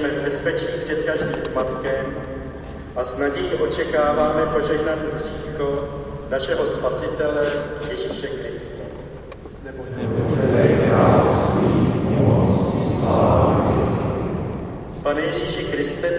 funeral inside of the church and sound of the winter landscape around.